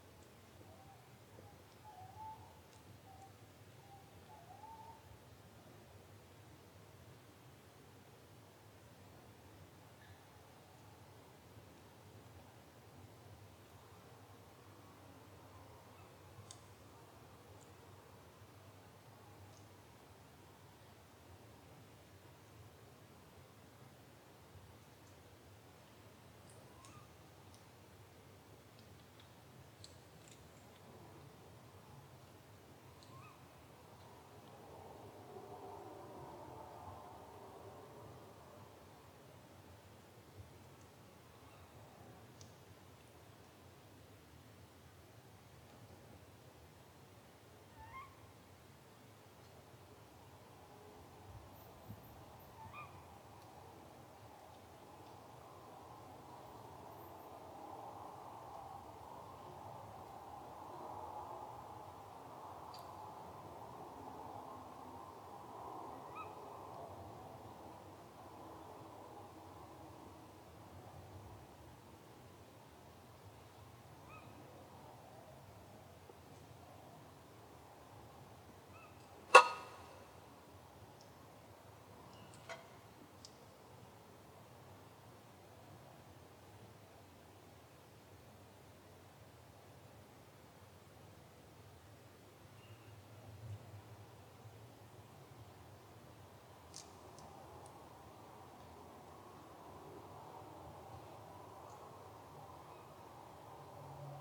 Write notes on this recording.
Deer, badgers and other woodland wildlife go about there business at 1am.